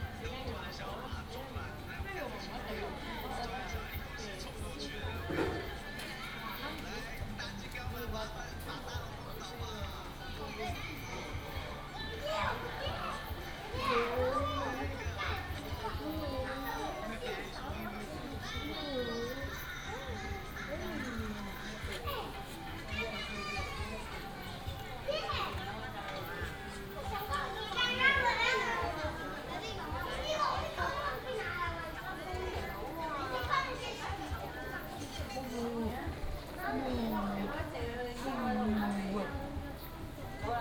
Wufeng Rd., Jiaoxi Township, Yilan County - In the pavilion
In the pavilion, Tourists and children